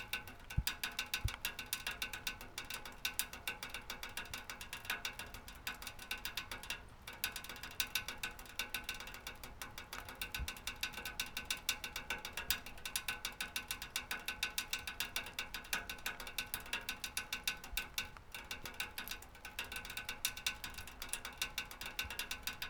Piatkowo district, Marysienki alotments - rain drum roll
rain drops going down and hitting the bottom of the drainpipe after heavy storm. owner of the place rummaging in the garage.
województwo wielkopolskie, Polska, European Union, May 2013